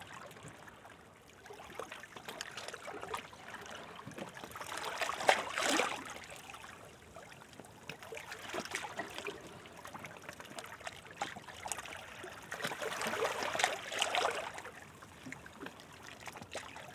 {"title": "Gökbel/Ortaca/Muğla, Turkey - Gentle waves in the rocks", "date": "2016-04-18 15:43:00", "description": "Sheltered from the prevailing waves, water calmly ebbs and flows between the rocks.\n(Recorded w/ AT BP4025 on SD633)", "latitude": "36.76", "longitude": "28.61", "timezone": "Europe/Istanbul"}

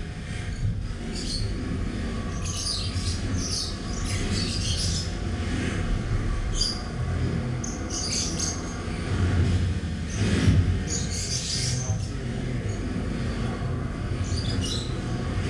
{"title": "Carrer de les Eres, Masriudoms, Tarragona, Spain - Masriudoms Gathering of Elders & Birds", "date": "2017-10-23 15:30:00", "description": "Recorded on a pair of DPA 4060s and a Marantz PMD661", "latitude": "41.02", "longitude": "0.88", "altitude": "200", "timezone": "Europe/Madrid"}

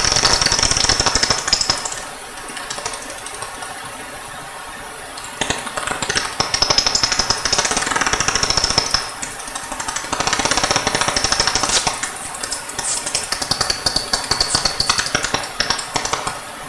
{"title": "Castlecomer Discovery Centre, Kilkenny, Ireland", "date": "2010-07-15 23:16:00", "description": "Bats feasting on river midgets, Soprano Pipstrelles, Daubentons Bats etc...", "latitude": "52.82", "longitude": "-7.18", "altitude": "155", "timezone": "Europe/Dublin"}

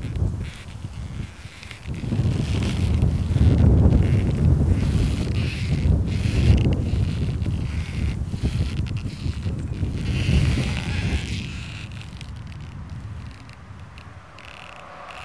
{"title": "Poznan, Piatkowo district, city limits - elastic band for a tree", "date": "2014-06-19 14:15:00", "description": "a tree strapped to two wooden poles with an braided elastic band. the band twitches and stretches as the tree moves in the wind.", "latitude": "52.46", "longitude": "16.90", "altitude": "98", "timezone": "Europe/Warsaw"}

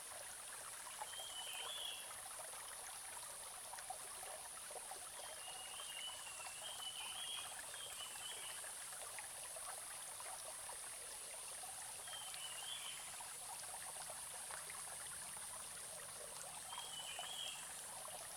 {"title": "種瓜坑溪, 成功里 - Bird and stream sounds", "date": "2016-07-14 10:14:00", "description": "Small streams, In the middle of a small stream, Bird sounds\nZoom H2n Spatial audio", "latitude": "23.96", "longitude": "120.89", "altitude": "454", "timezone": "Asia/Taipei"}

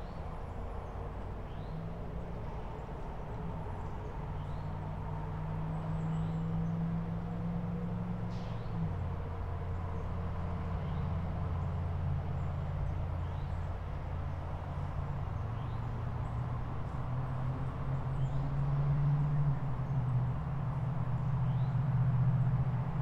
{"title": "Wetlands area and elevated boardwalk, Heritage Park Trail, Smyrna, GA, USA - Wetland Boardwalk", "date": "2020-12-29 15:57:00", "description": "An ambience recording made on the side of a boardwalk over some wetlands. It's winter, so wildlife activity is minimal. There were some squirrels rustling in the leaves, as well as some birds. It's a nice place, but there's a busy road off to the right side that produces lots of traffic sounds. EQ was done in post to reduce the traffic rumble.\n[Tascam Dr-100mkiii w/ Primo Em-272 Omni mics]", "latitude": "33.84", "longitude": "-84.54", "altitude": "253", "timezone": "America/New_York"}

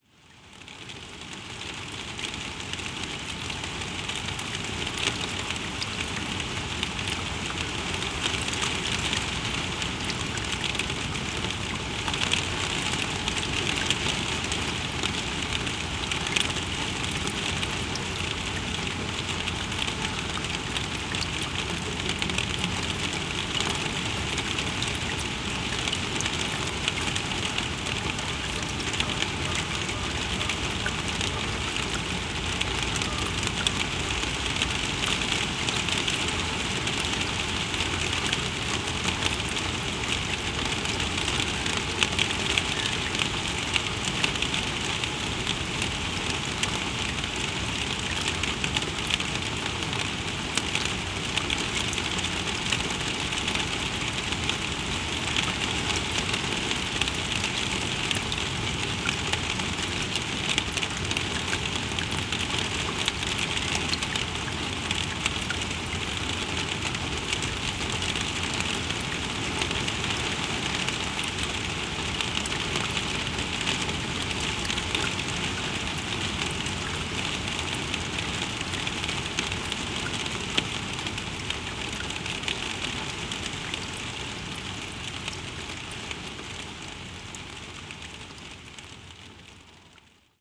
{"title": "Castor, Peterborough, UK - Rain in gutters", "date": "2020-02-28 09:33:00", "description": "Recorded on a Mix Pre-3 and pair of stereo DPA 4060s. Rain on corrugated roof of shelter. L and R mics taped to gutter.", "latitude": "52.56", "longitude": "-0.31", "altitude": "8", "timezone": "Europe/London"}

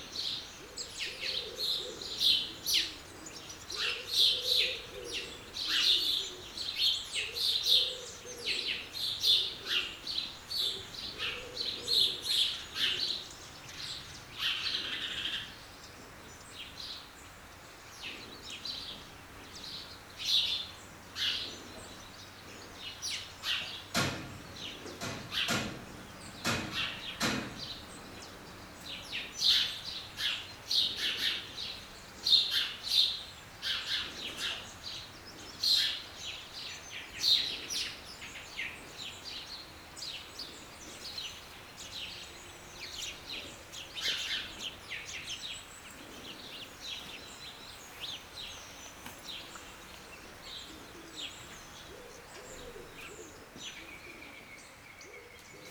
{"title": "Oigny, France - Near the farm", "date": "2017-07-30 07:50:00", "description": "Walking along the Seine river, we encountered this farm, early on the morning, where sparrows were singing and eating wheat grains.", "latitude": "47.57", "longitude": "4.71", "altitude": "358", "timezone": "Europe/Paris"}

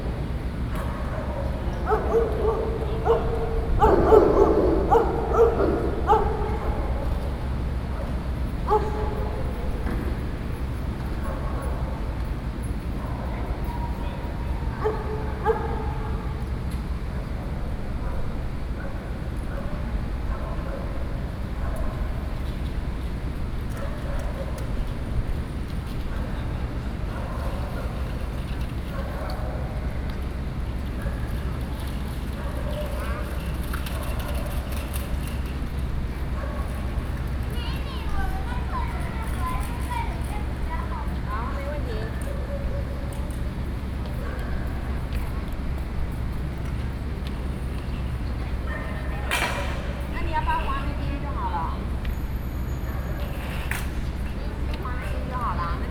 {"title": "Xuecheng Rd., Sanxia Dist., New Taipei City - In the Plaza", "date": "2012-07-08 10:23:00", "description": "In the Plaza Community, Traffic Sound, Child, Dogs barking\nBinaural recordings, Sony PCM D50+Soundman okm", "latitude": "24.95", "longitude": "121.38", "altitude": "31", "timezone": "Asia/Taipei"}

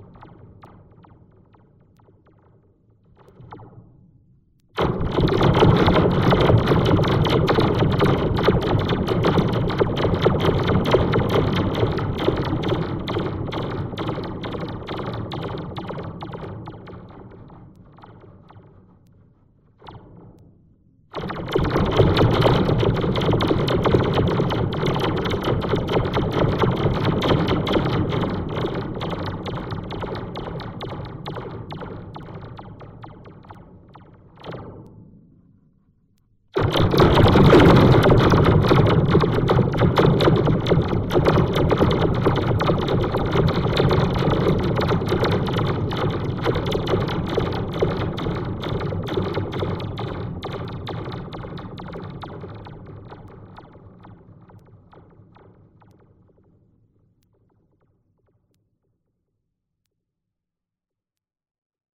{"title": "Florac, France - Spring fence", "date": "2016-04-29 13:10:00", "description": "A spring fence, recorded with contact microphones. Using this fence makes some strange noises.", "latitude": "44.34", "longitude": "3.57", "altitude": "705", "timezone": "Europe/Paris"}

